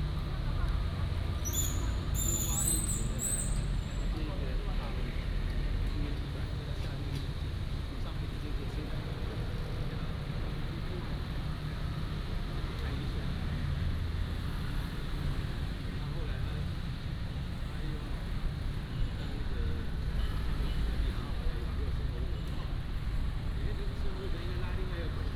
Jincheng Township - in front of the temple
in front of the temple